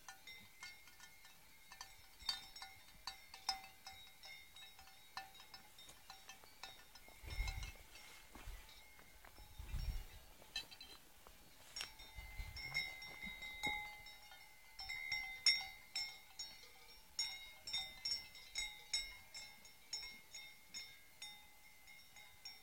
{"title": "Vallefiorita, Pizzone, IS", "date": "2010-08-21 08:00:00", "description": "Cow bells in a pristine environment", "latitude": "41.69", "longitude": "13.98", "timezone": "Europe/Rome"}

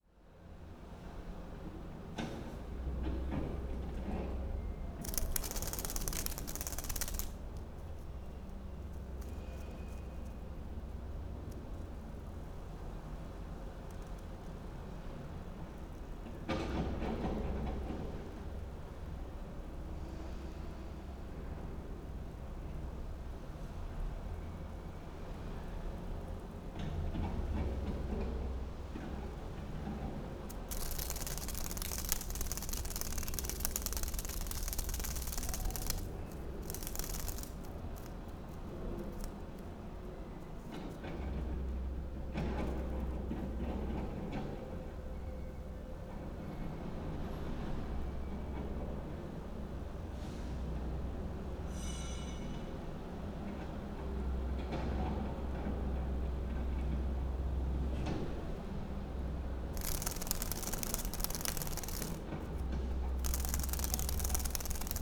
{"title": "Tallinn, Kultuurikatel - butterfly window", "date": "2011-07-08 10:10:00", "description": "tallinn, kultuurikatel, upper floor, half dead butterfly at window", "latitude": "59.44", "longitude": "24.75", "timezone": "Europe/Tallinn"}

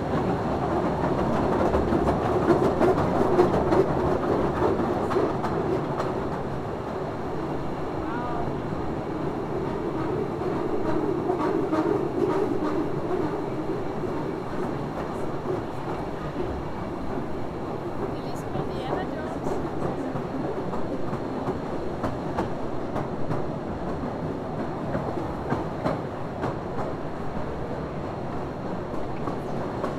{"title": "Postojna, Slovenien - Train inside Postojna cave", "date": "2014-09-07 13:57:00", "description": "This beutiful train ride is like an Indiana jones adventure, that is nice to listen to, and truely beautiful to expirience. I Daniel was siting in front with my tascam recorder.", "latitude": "45.78", "longitude": "14.20", "altitude": "539", "timezone": "Europe/Ljubljana"}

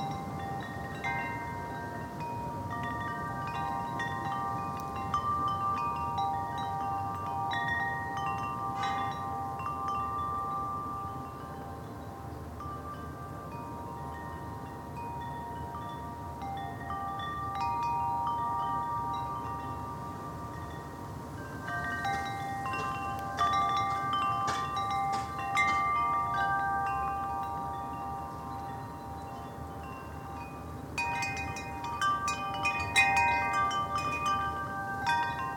{"title": "My friend's Garden, Drummagh, Co. Leitrim, Ireland - Windchimes and Guide/Assistance Dog", "date": "2014-03-25 11:40:00", "description": "This is the sound of my friend's windchimes, and the lovely situation of us, and her Guide/Assistance Dog hanging out in the garden and listening to them. This is a quiet neighbourhood on the outskirts of Carrick, so you can just hear a bit of someone digging nearby, the birds in the trees and the swelling of the wind as it passes over. We shared some nice moments standing there, my friend smoking, the chimes chiming, the dog sniffing the recorder, and the sunshine shining, with just the tiniest chill in the air. Beautiful sounds, sorry for the bit of wind distortion here and there in the recording.", "latitude": "53.95", "longitude": "-8.08", "altitude": "50", "timezone": "Europe/Dublin"}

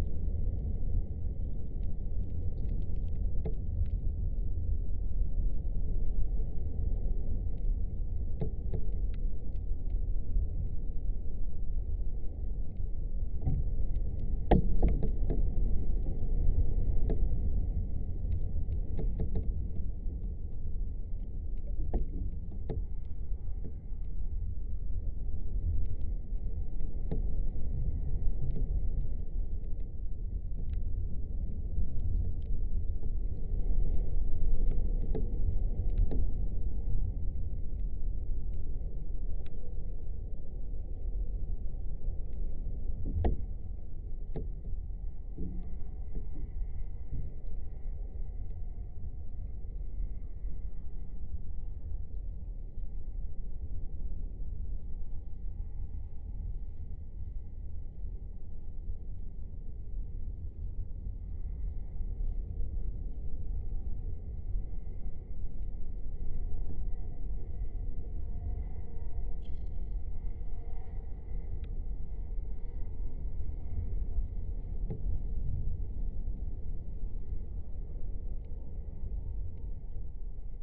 {"title": "Vyzuonos, Lithuania, broken doors", "date": "2019-03-03 15:15:00", "description": "windy day. abandoned empty warehouse. half brohen wooden doors. contact mics between the wood parts", "latitude": "55.57", "longitude": "25.50", "altitude": "103", "timezone": "Europe/Vilnius"}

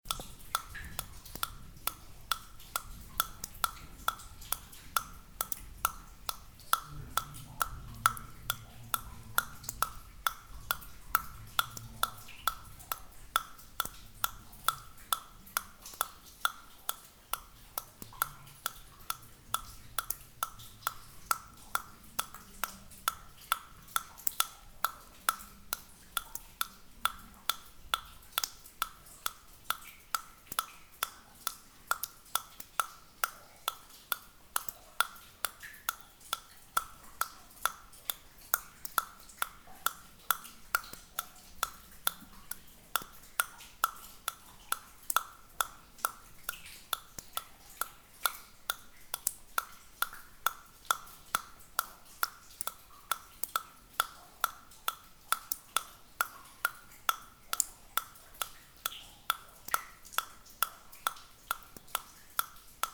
{"title": "Rumelange, Luxembourg - Hutberg mine techno", "date": "2015-05-23 09:10:00", "description": "Techno music in a underground abandoned mine.", "latitude": "49.47", "longitude": "6.02", "altitude": "389", "timezone": "Europe/Luxembourg"}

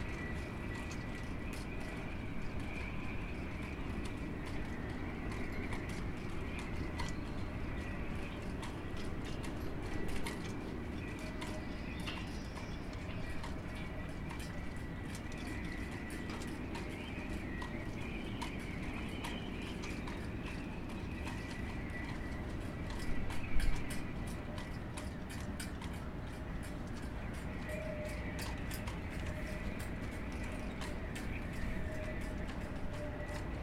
{"title": "Avenue des Frégates, Saint-Nazaire, France - Saint-Nazaire, shipbuilding with the wind", "date": "2021-02-20 15:05:00", "description": "Nautical basin, shipbuilding with the wind - recorded with ZoomH4", "latitude": "47.28", "longitude": "-2.20", "altitude": "8", "timezone": "Europe/Paris"}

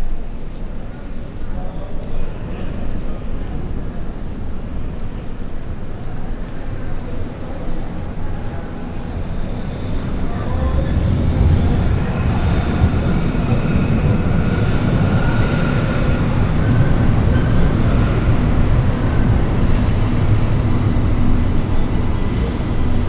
{"title": "essen, main station, subway station", "date": "2011-06-09 22:15:00", "description": "At the subway station underneath the main station (invisible from here)\nSeveral trains arriving and leaving again in the huge hall with about 8 different tracks - recorded in the evening time. Also sounds of air pressured doors and electronic alarm signals.\nProjekt - Klangpromenade Essen - topograpgic field recordings and social ambiences", "latitude": "51.45", "longitude": "7.01", "timezone": "Europe/Berlin"}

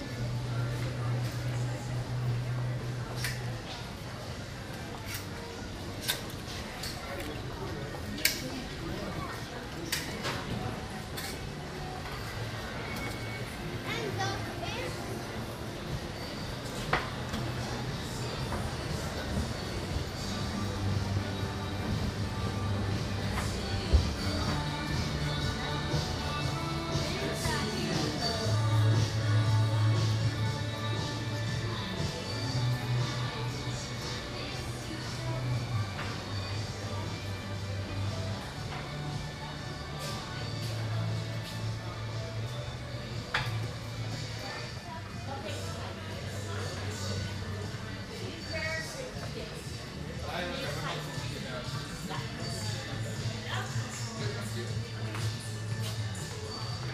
{"title": "V&D department store, The Hague", "date": "2010-08-07 13:21:00", "description": "Interior of the V&D department store. Walking through several departments.\nZoom H2 recorder with Sound Professionals SP-TFB-2 binaural microphones.", "latitude": "52.08", "longitude": "4.31", "altitude": "10", "timezone": "Europe/Amsterdam"}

